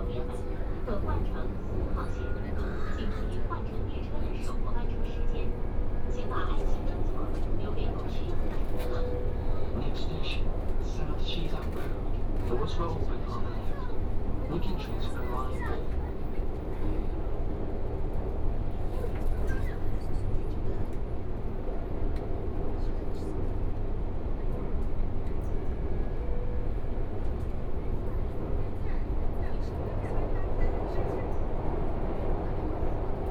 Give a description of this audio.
from Laoximen Station to South Xizang Road Station, Binaural recordings, Zoom H6+ Soundman OKM II